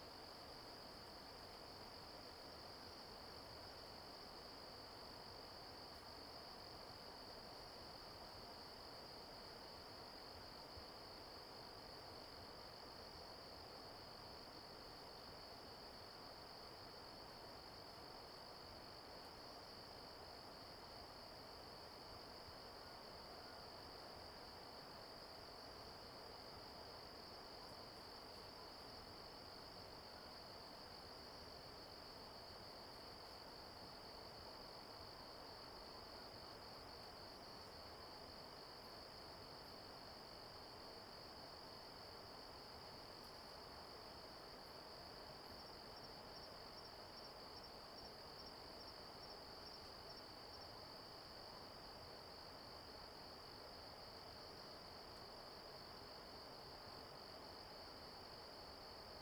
土坂, 達仁鄉台東縣, Taiwan - Late night in the woods
Stream sound, Late night in the woods, Bird call, Insect cry
Zoom H2n MS+XY